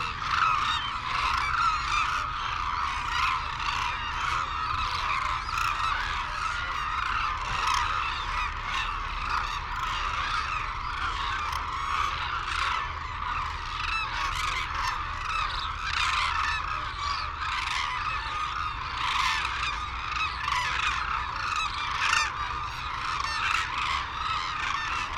Sho, Izumi, Kagoshima Prefecture, Japan - Crane soundscape ...
Arasaki Crane Centre ... Izumi ... calls and flight calls from white naped cranes and hooded cranes ... cold sunny windy ... background noise ... Telinga ProDAT 5 to Sony Minidisk ... wheezing whistles from young birds ...
Kagoshima-ken, Japan, 18 February 2008, 08:50